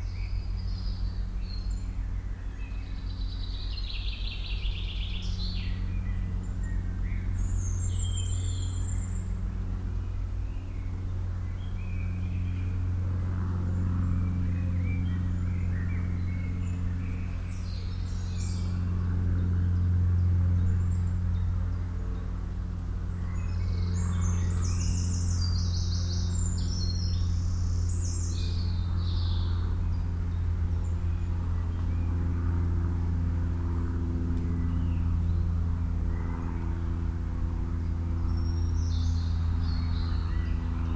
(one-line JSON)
{"title": "Holt County Park, Edgefield Hill, Holt - Holt County Park", "date": "2021-06-06 17:06:00", "description": "Holt Country Park is set in 100 acres of mixed woodland. Bird noise, distant traffic rumble, aircraft passes overhead.\nRecorded with a Zoom H1n with 2 Clippy EM272 mics arranged in spaced AB.", "latitude": "52.90", "longitude": "1.09", "altitude": "72", "timezone": "Europe/London"}